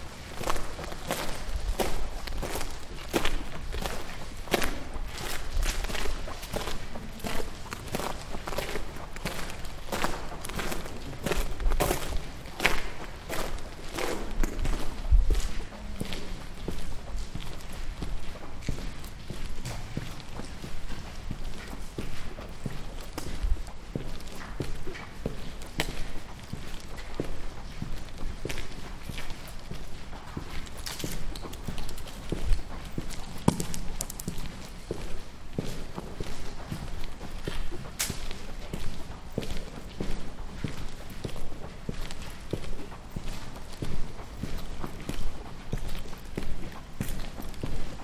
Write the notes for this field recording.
walk inside the tunel, former railway tunel, recently open for bikers and walkers.